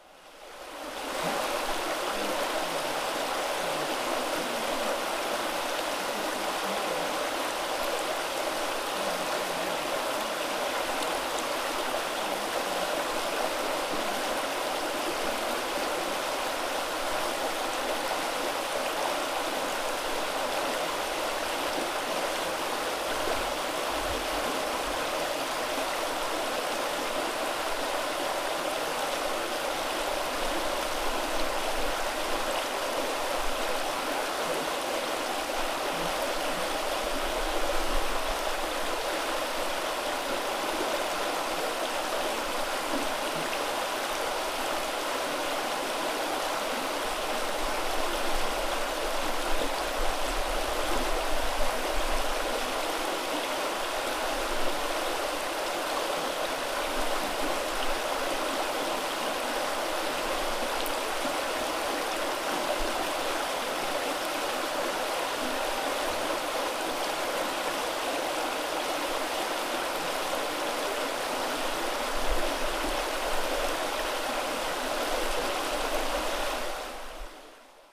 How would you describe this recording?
Rapids streams under the train bridge over Husån. Distant voices from the soundwalk participants discussing herbs and picking wild strawberries. Recording made during soundwalk at World Listening Day, 18th july 2010.